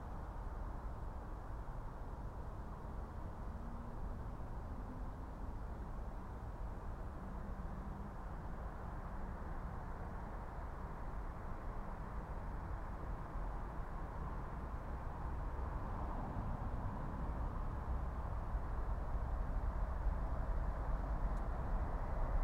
Saltwell Allotments, Gateshead, UK - Geese, Train
Recording on path between Saltwell Cemetery and Saltwell Allotments. Facing West and Team Valley Trading Estate. A1 in distance and East Coast Mainline near-by. Two formations of Geese, possibly Canadian Geese fly over. Recording includes sound of train travelling South on East Coast Mainline. Also sound of dog whining. Recorded on Sony PCM-M10.